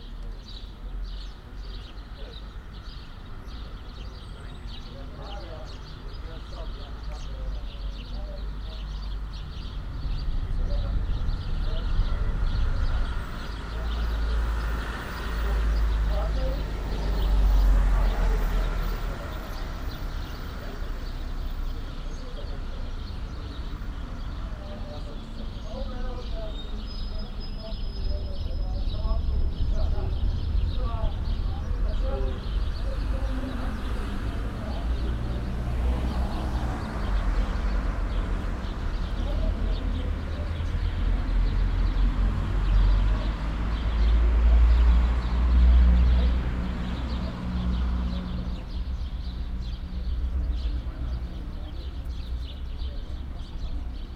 Schopenhauerstraße, Weimar, Germany - Man and Nature
*Listen with headphones for best acoustic results.
An acoustic demonstration of urban design and planning involving natural installations. This place has been designed with tress on the periphery with dotted trees and quadratic floral-scapes in the forecourt which makes it noticeably vibrant with bird life.
Major city arrivals and transits take place here. Stereo field is vivid and easily distinguishable.
Recording and monitoring gear: Zoom F4 Field Recorder, LOM MikroUsi Pro, Beyerdynamic DT 770 PRO/ DT 1990 PRO.